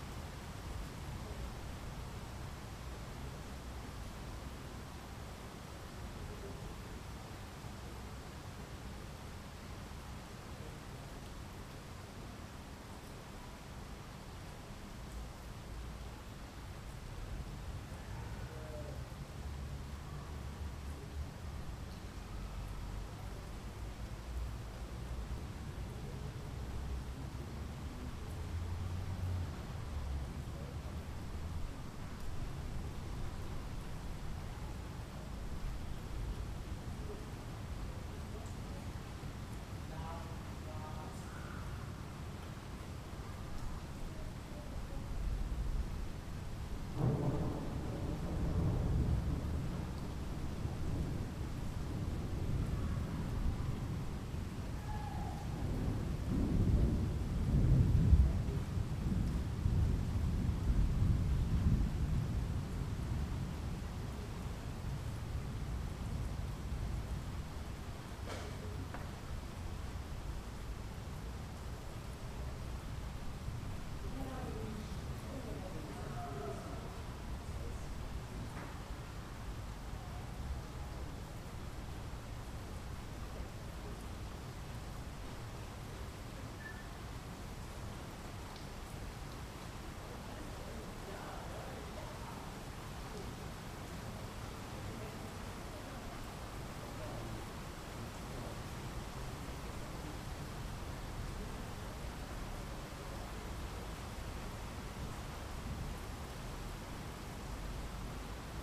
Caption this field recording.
After several days of sunny weather, today's sky gives a preliminary taste of autumn: it is dark, grey and rainy. The recording captures the moment, when a heavy rain starts and thunder rolls.